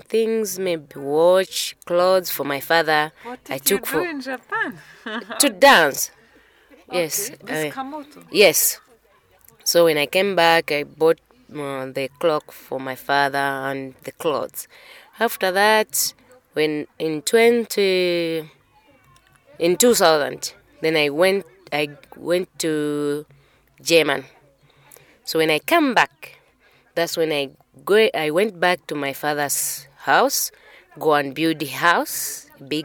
Old Independence Stadium, Lusaka, Zambia - Tasila Phiri dancing around the world...
... Tasila continues with her story...
26 November 2012